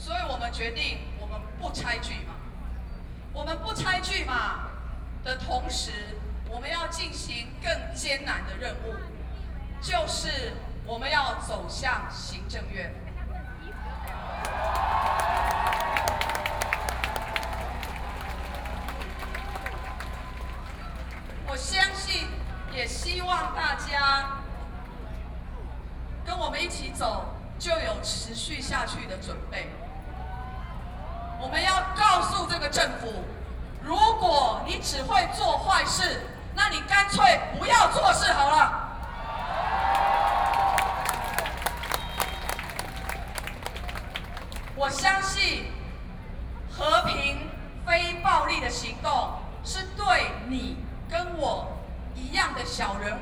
Creators of art and culture in Taiwan, Participation in protests, Sony PCM D50 + Soundman OKM II